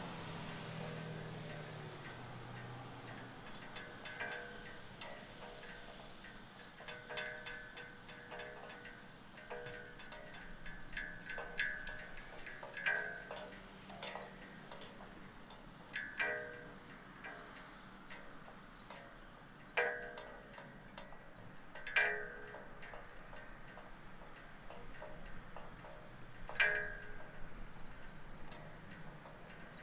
Quarantine town. Winter and snow. Flag poles playing in the wind.
Utenos rajono savivaldybė, Utenos apskritis, Lietuva, 20 January 2021